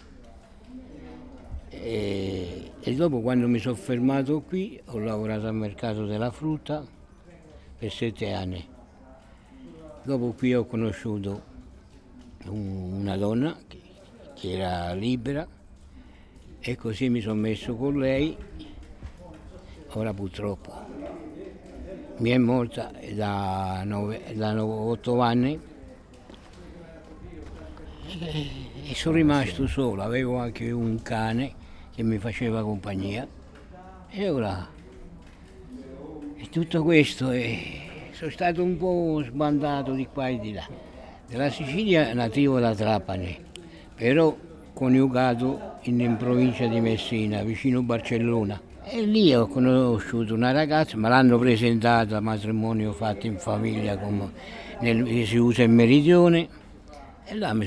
Via Felice Cavallotti, Massa MS, Italia - Filippo
Filippo è siciliano. Sta seduto tutto il giorno su una panca accanto alla bottega di alimentari. Ha lavorato nel circo. Dopo varie peripezie è arrivato a Massa e ha trovato lavoro al mercato di Piazza Mercurio. Si è messo con una donna della borgata. Ora è rimasto solo, gli è morto anche il cane, ma ama la solitudine.